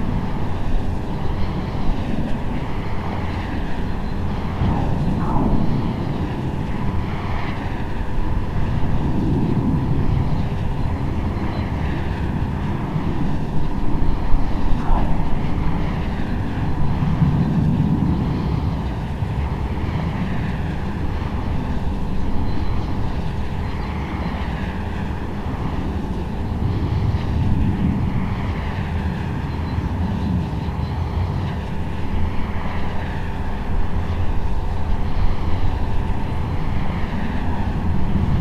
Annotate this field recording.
the city, the country & me: may 8, 2011